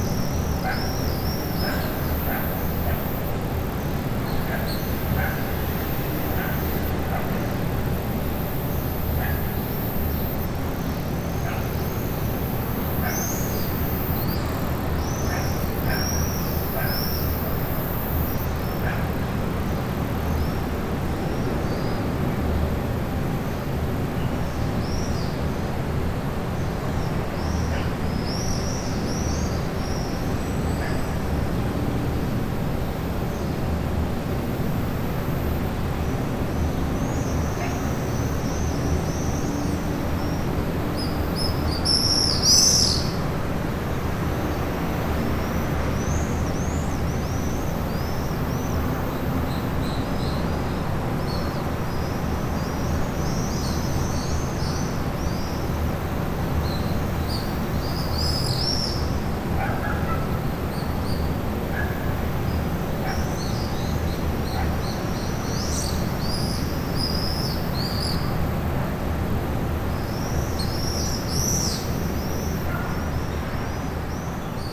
Anapa. - Morning symphony. Anapa Lazurnaya hotel.
Fourth-floor balcony. Sunny morning.
Tech.: Sony ECM-MS2 -> Tascam DR-680.